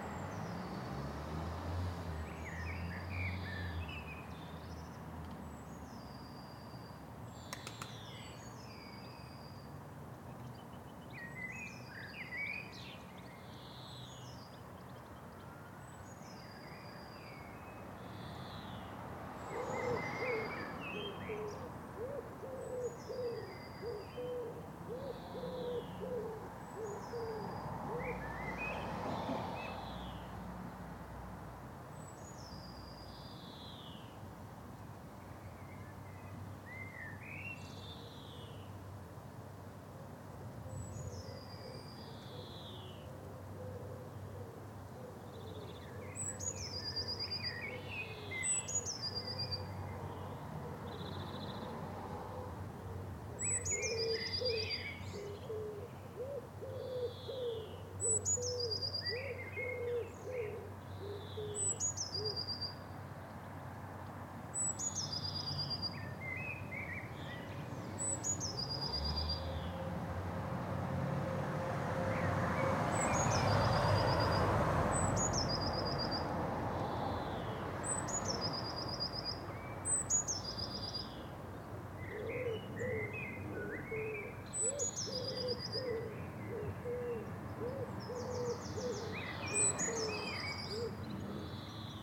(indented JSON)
{
  "title": "Morgan Road, Reading, UK - The birds singing between the growls of the cars",
  "date": "2017-04-04 18:25:00",
  "description": "As I was walking up Kendrick Road of a fine spring evening, I noticed the air was thick with lovely birdsong so I stopped to listen. There is a wide road to the right of where I'm stood here, through which you can hear individual cars and lorries passing sporadically, with pauses in between where the resident birds can be heard singing out their wee hearts. Along with the occasional police siren. Recorded with my trusty Edirol R-09.",
  "latitude": "51.45",
  "longitude": "-0.96",
  "altitude": "62",
  "timezone": "Europe/London"
}